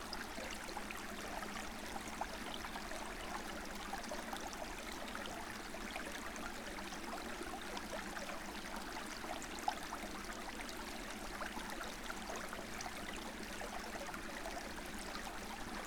{"title": "Nolenai., Lithuania, spring's streamlet", "date": "2016-03-02 13:50:00", "latitude": "55.56", "longitude": "25.60", "altitude": "131", "timezone": "Europe/Vilnius"}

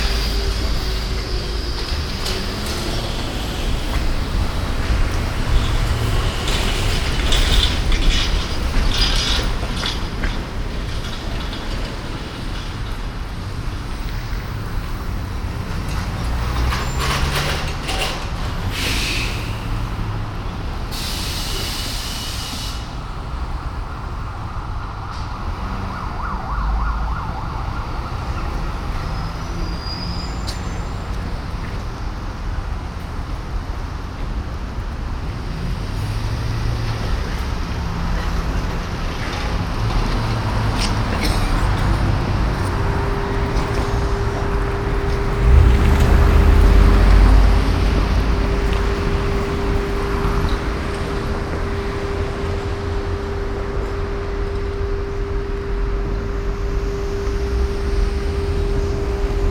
{"title": "vancouver, granville street corner davie street, traffic", "description": "traffic downtown in the early afternoon\nsoundmap international\nsocial ambiences/ listen to the people - in & outdoor nearfield recordings", "latitude": "49.28", "longitude": "-123.13", "altitude": "42", "timezone": "GMT+1"}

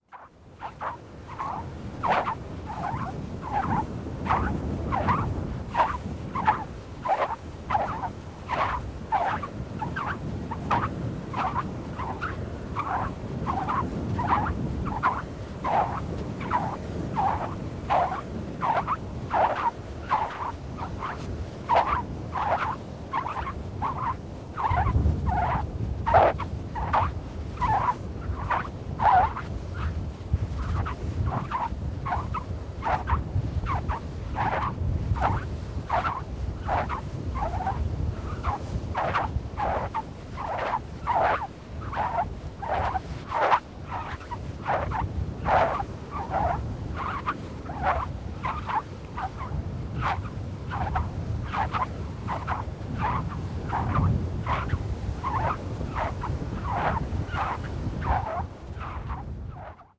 {"title": "Sasino, at the beach - listless feet", "date": "2013-08-25 12:40:00", "description": "dragging feet on the sand. one of my favorite sounds.", "latitude": "54.80", "longitude": "17.75", "altitude": "25", "timezone": "Europe/Warsaw"}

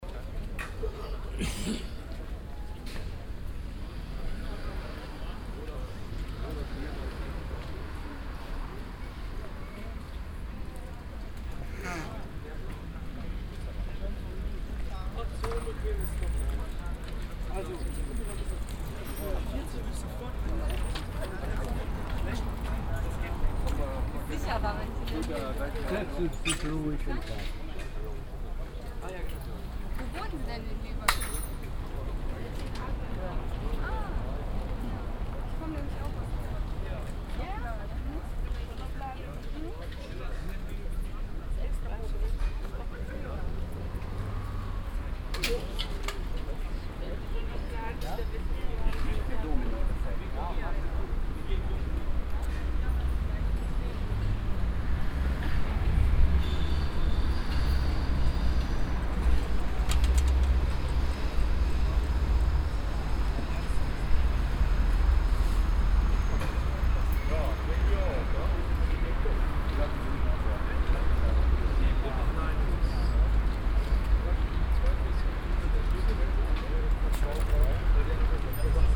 {"title": "cologne, neusserstrasse, agneskirche, platz - cologne, agneskirche, fahrradmarkt", "date": "2008-07-07 12:02:00", "description": "samstäglicher fahrradmarkt auf platz vor der kirche, morgens - durch lüftungsgitter durchfahrt der u-bahn\nsoundmap nrw: social ambiences/ listen to the people - in & outdoor nearfield recordings, listen to the people", "latitude": "50.95", "longitude": "6.96", "altitude": "55", "timezone": "Europe/Berlin"}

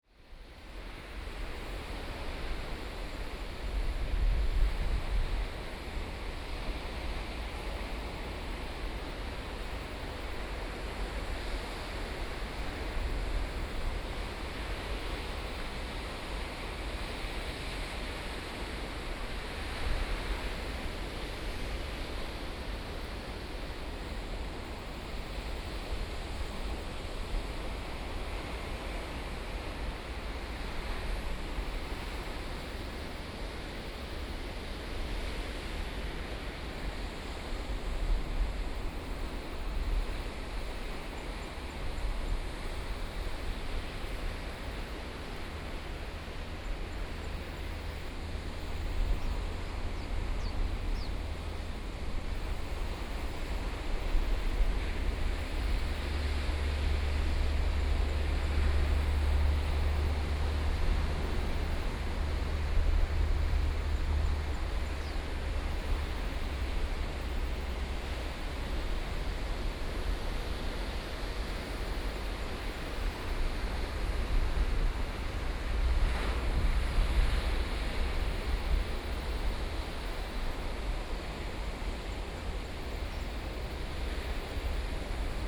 {"title": "三貂角, New Taipei City - Sound of the waves", "date": "2014-07-21 14:27:00", "description": "Sound of the waves, Small fishing village, Traffic Sound, Very hot weather\nSony PCM D50+ Soundman OKM II", "latitude": "25.01", "longitude": "122.00", "altitude": "10", "timezone": "Asia/Taipei"}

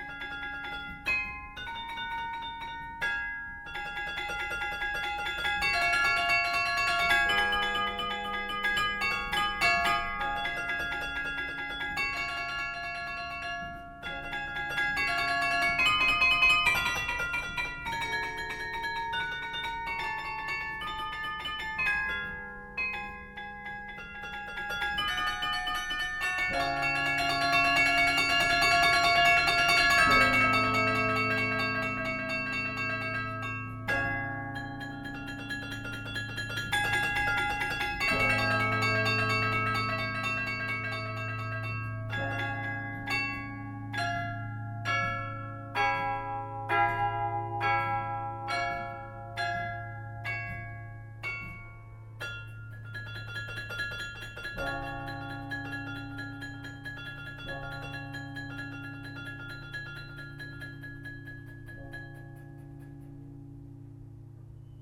{"title": "Mons, Belgique - Mons carillon", "date": "2012-12-01 12:42:00", "description": "Carillon of the Mons belfry. Melody is played by Pascaline Flamme.", "latitude": "50.45", "longitude": "3.95", "altitude": "68", "timezone": "Europe/Brussels"}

